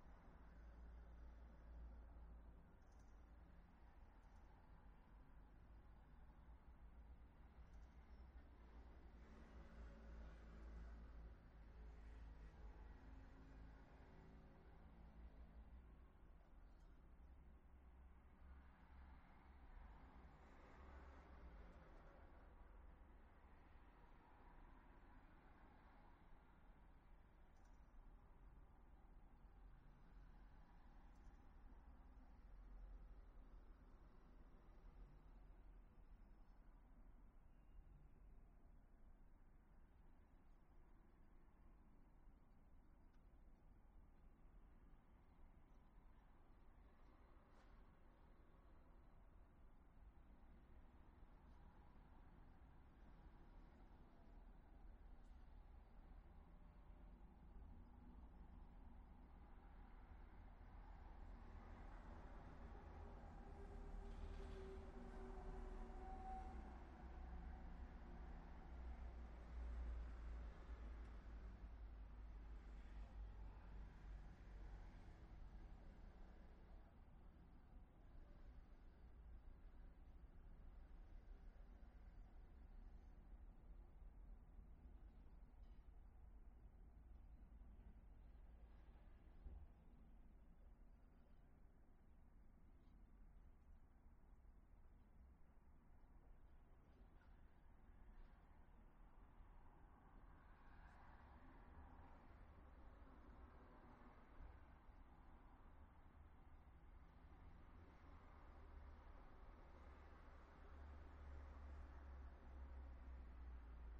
Via Federico Ricci - Almost NYE
few hours before midnight. not going to any party.
Liguria, Italia, 31 December